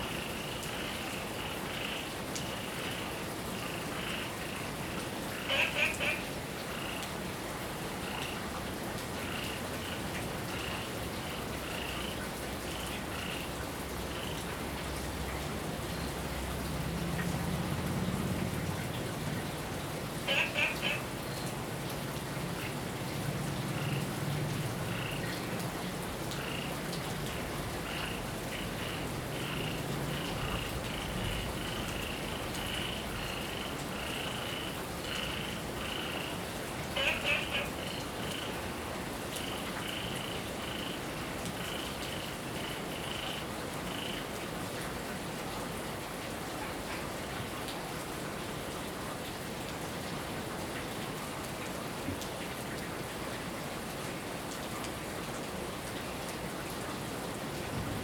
Frog chirping, Heavy rain
Zoom H2n MS+ XY